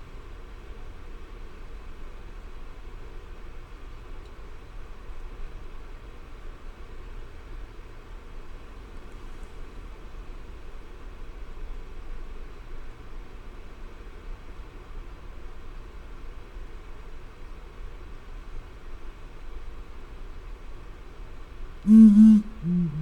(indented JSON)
{
  "title": "Yoroushi, Nakashibetsu, Shibetsu District, Hokkaido Prefecture, Japan - Blakistons Fish Owl ...",
  "date": "2008-02-26 18:30:00",
  "description": "Blakiston's fish owl ... three birds present ... the calls are a duet ... male 1 3 ... female 2 4 ... or male 1 2 ... female 3 4 ... at 05:10 one bird flies off and the separate parts of the duet can be heard ... extremely cold and frequent snow showers ... Teling ProDAT 5 to Sony Minidisk ... just so fortunate to record any of this ...",
  "latitude": "43.59",
  "longitude": "144.72",
  "altitude": "205",
  "timezone": "Asia/Tokyo"
}